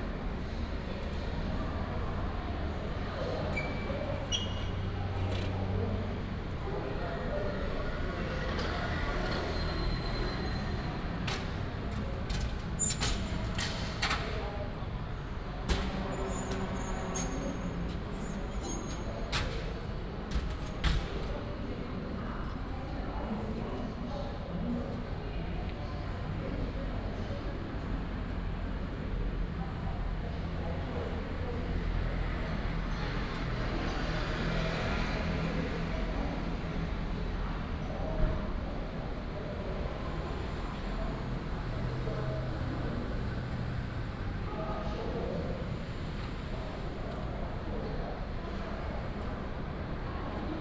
Ln., Sec., Guangfu Rd., East Dist., Hsinchu City - School classrooms
in the School classrooms, In the past was the old community building, Currently changed to school classrooms, traffic sound, Binaural recordings, Sony PCM D100+ Soundman OKM II